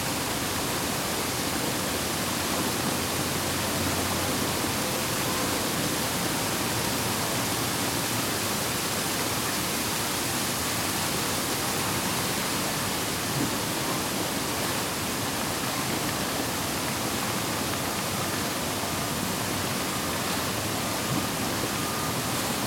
From the center of downtown Detroit comes a recording of the large Campus Martius "Woodward Fountain". I recorded this on a muggy late June evening in 2014 on a Tascam DR-07. You can hear variations in how the water is being shot into the air and falling back and then over the edge, as well as some nice urban ambiance.
Detroit, MI, USA, 22 June 2014, ~21:00